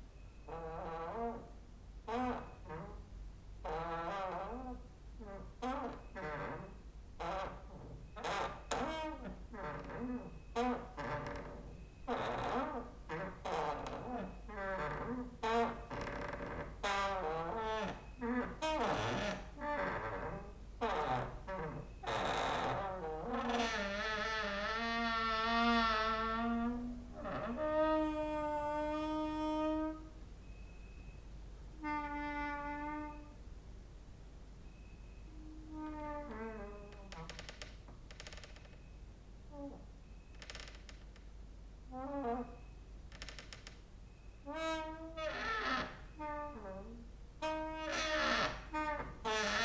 Mladinska, Maribor, Slovenia - late night creaky lullaby for cricket/8
cricket outside, exercising creaking with wooden doors inside